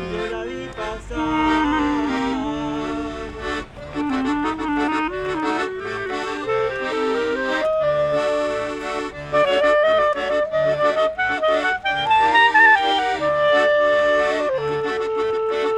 Afternoon at Plaza el Descanso, musicians sing and play accordion and clarinet
(SD702, Audio Technica BP4025)
Valparaíso, Región de Valparaíso, Chile